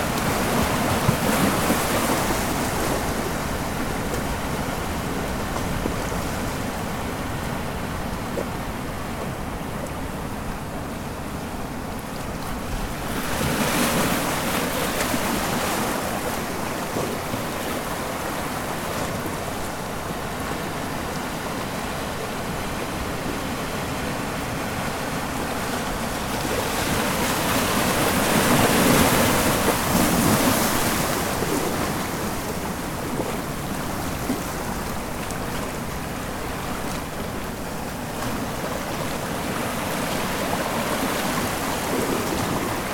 Pedras da Ponta Norte da praia da Lagoinha, Ubatuba - SP, 11680-000, Brasil - Praia da Lagoinha - Pedras da Ponta Norte
Recording performed on the stones of the northern tip of Lagoinha beach. Near the mouth of the river Lagoinha and the beginning of the trail to the beach of Bonete. A TASCAM DR 05 digital recorder was used. Cloudy day with high tide.
Ubatuba - SP, Brazil, 26 August 2018, 13:00